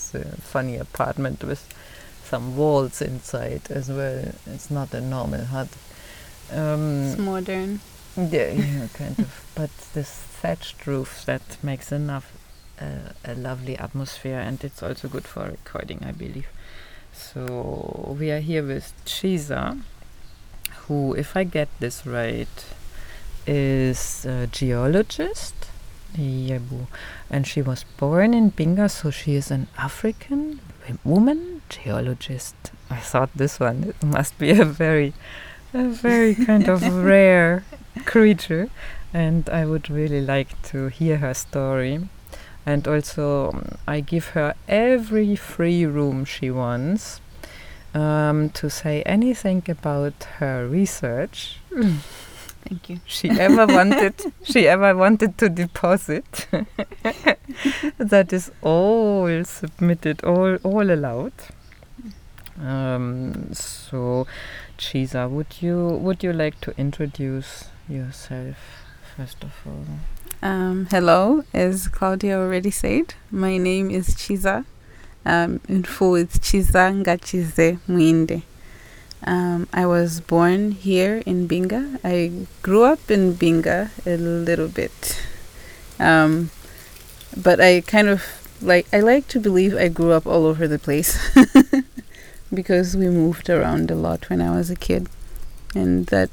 in the grounds of Tusimpe Mission, Binga - i am a girl from Binga...
...we are sitting with Chiza Mwiinde in front of a large hut on the grounds of Tusimpe Catholic Mission the thatched roof offers a nice shade to linger and hides us just about enough from a strong wind which is – as you’ll hear – playing wildly with the dry leaves and bushes around Chiza was born in Binga, a place at the back of beyond as some say, and is now studying geo-sciences at Smith College in the US. We worked together at the local womens organisation Zubo Trust, Chiza as an Intern, me as a multimedia volunteer. I was intrigued by her art of storytelling, especially about rocks, her research so I enticed her into this long interview to share her story with us, her journey as a girl from rural Binga becoming a woman geo-scientist .